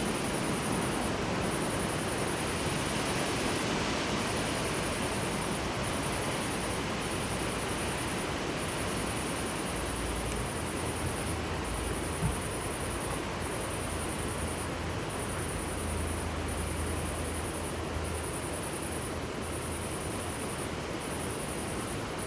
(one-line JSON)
{"title": "Saint-Georges-d'Oléron, Frankrijk - wind in tent", "date": "2013-08-15 15:00:00", "description": "a rare silent moment at a camping\ninside our tent listening to the wind", "latitude": "45.99", "longitude": "-1.38", "altitude": "8", "timezone": "Europe/Paris"}